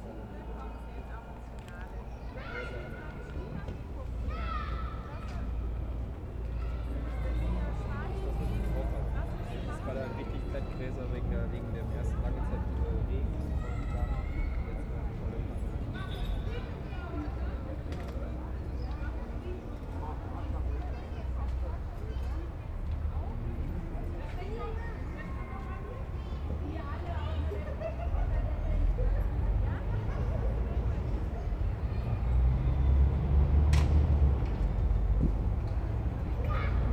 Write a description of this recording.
football world championship 2010, kids playing football in a sandlot, the city, the country & me: july 3, 2010